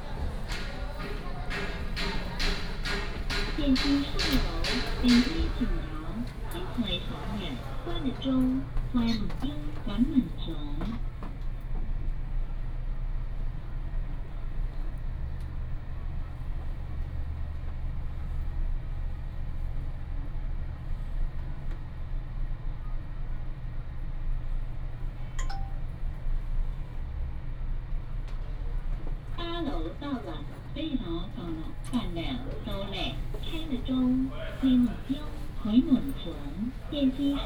Taoyuan City Government, Taiwan - Walking in the city hall
Walking in the city hall, Take the elevator
Taoyuan District, Taoyuan City, Taiwan, 19 October 2016